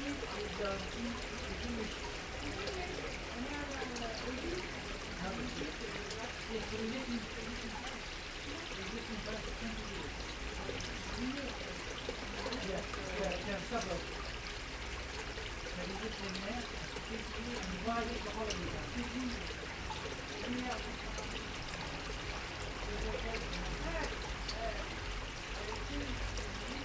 Lavoir Saint Léonard Honfleur (A1)
Lavoir Saint Léonard à Honfleur (Calvados)